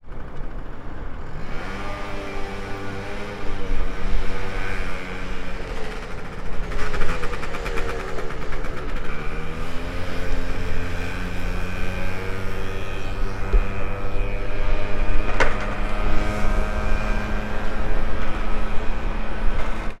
recorded with the sennheiser ME-66 and computer
Binckhorstlaan, Laak, The Netherlands, 13 March 2012